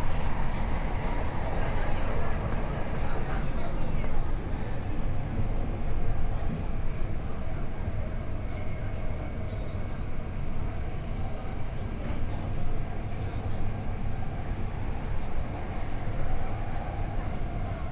Tamsui District, New Taipei City - Tamsui Line (Taipei Metro)
from Zhuwei Station to Tamsui Station, Binaural recordings, Sony PCM D50 + Soundman OKM II